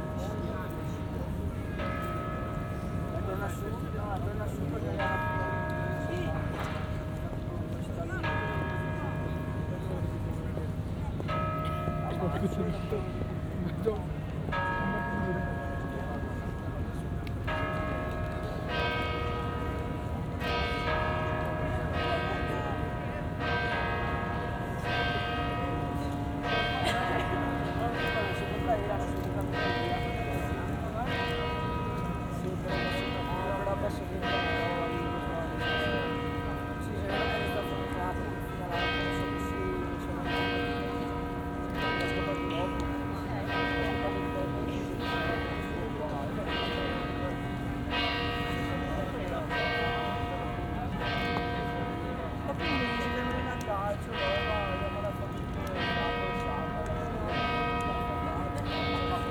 San Marco, Wenecja, Włochy - Ringing bells in noon ( binaural)
Ringing bells in noon and soundscapes around ( binaural)
OLYPUS LS-100